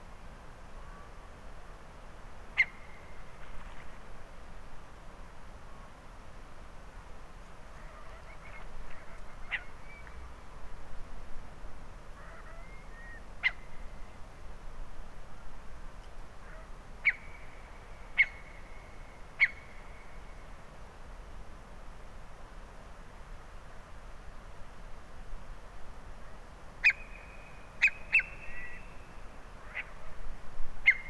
Isle of Mull, UK - Curlew Grey Heron and fir trees at night
To the left of me were a line of pine trees that sang even with the slightest of breezes, and to the right was a hillside with a series of small waterfalls running down its slope. This recording includes Curlew, Grey Heron, Redshank, Greylag geese, Herring Gulls and the sound of seals rolling in the water of the still loch. Sony M10 and SAAS.